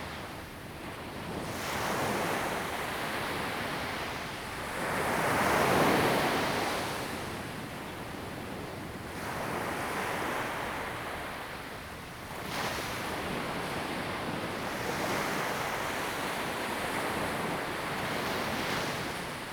Liukuaicuo, Tamsui Dist., New Taipei City - Sound of the waves
Aircraft flying through, Sound of the waves
Zoom H2n MS+XY